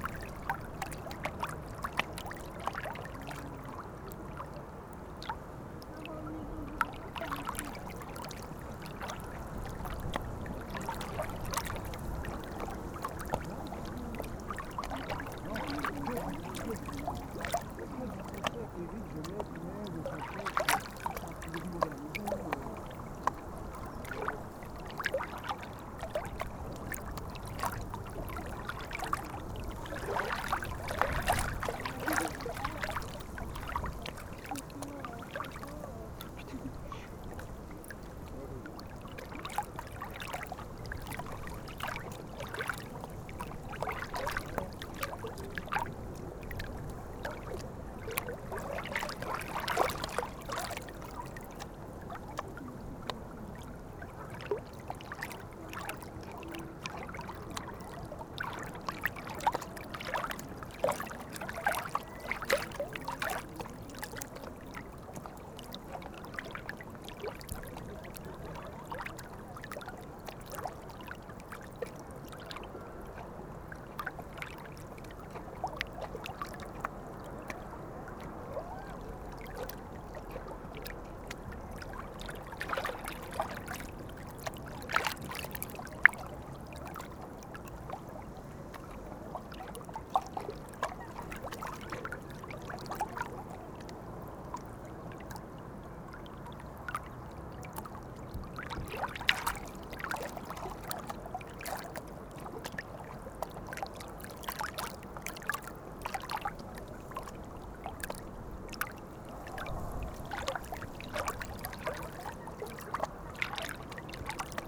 Quartier des Bruyères, Ottignies-Louvain-la-Neuve, Belgique - Wavelets
Wavelets on the Louvain-La-Neuve lake. Just near, people tan because it's a very hot day.
Ottignies-Louvain-la-Neuve, Belgium